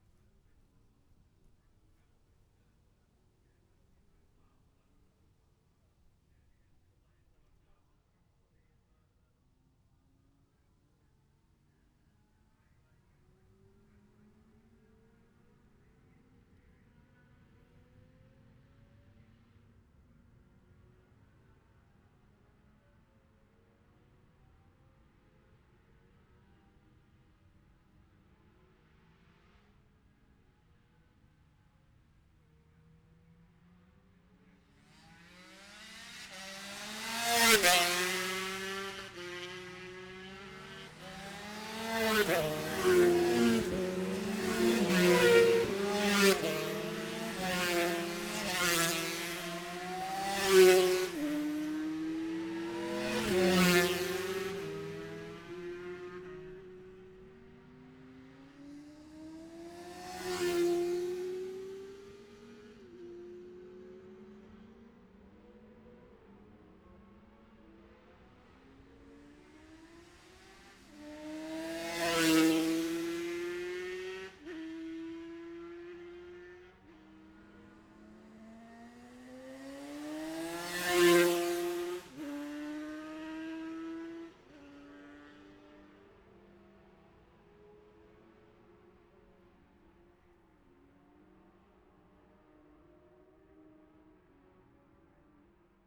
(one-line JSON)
{"title": "Jacksons Ln, Scarborough, UK - Gold Cup 2020 ...", "date": "2020-09-11 14:34:00", "description": "Gold Cup 2020 ... 2 & 4 strokes Qualifying ... dpas bag MixPre3 ... Monument Out ...", "latitude": "54.27", "longitude": "-0.41", "altitude": "144", "timezone": "Europe/London"}